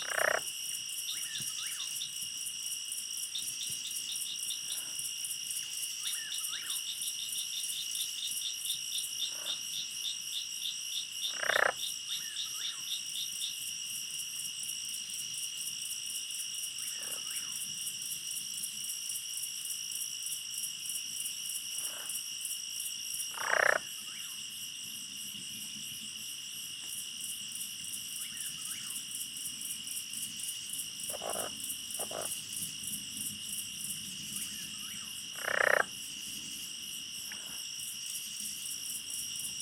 Recorded with a pair of DPA 4060s and a Marantz PMD661
TX, USA, 31 May